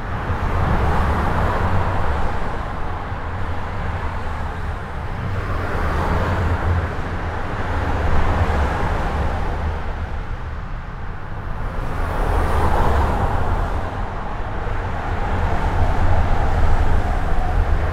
Court-St.-Étienne, Belgique - N25 à Defalque
A very dense trafic on the local highway, called N25. There's a lot of trucks !